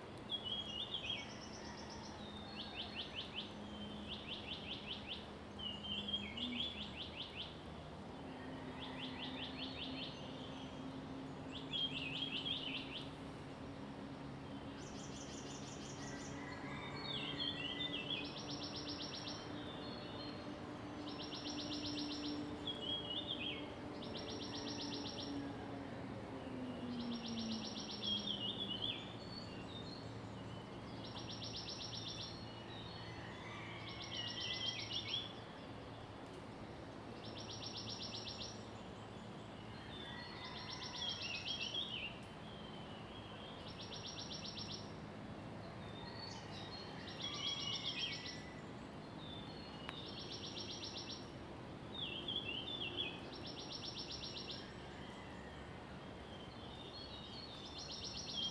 early morning, Bird sounds, Chicken sounds
Zoom H2n MS+XY
Nantou County, Puli Township, 水上巷, 19 April 2016, 05:21